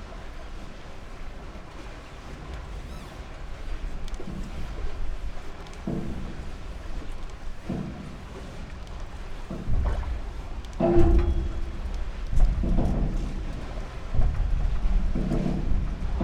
Sang Jung-do disused ferry wharf - Sang Jung-do disused ferry wharf （상中島 부두）
these small islands in Chuncheon lake arenow connected by a new bridge system...the former ferry services have been made redundant...one passenger ferry remains tethered to this wharf...recorded first from ferry side then from the boat side...some turbulence in the recording, nonetheless the low frequency knocking of the boat and pier are of interest...
Gangwon-do, South Korea, 17 March